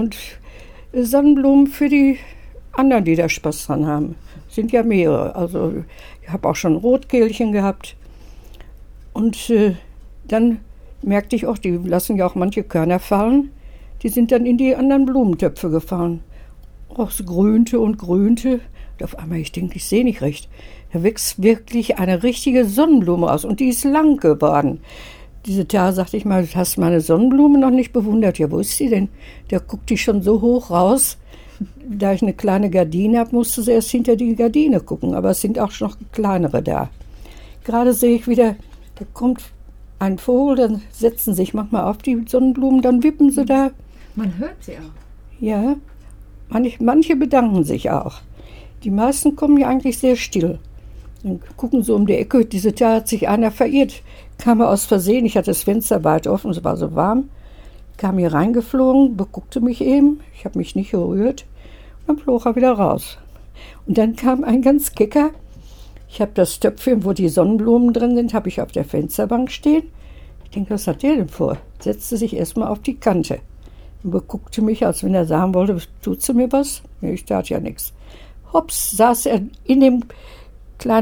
Irmgard Fatheuer sits with us at her favorite window place overlooking the huge trees in the garden behind the church. Irmgard was born in this house in 1926 and has lived here ever since. Listening to the birds and the wind in the trees, she tells us about the sounds she can still hear in her memory, like her father working in the bakery downstairs… One sound features strongly, and comes in live… (it’s the traditional call for the prayer called “Angulus” in the Catholic Church; it rings at 7am, 12 noon and 7 pm)
Wir sitzen mit Irmgard Fatheuer an ihrem Fensterplatz und blicken in die grossen Bäume des Kirchgartens. Irmgard ist 1926 in diesem Haus geboren… Geräusche aus der Erinnerung mischen sich ins Jetzt. Es gibt unendlich viel zu erzählen…
recordings and more info: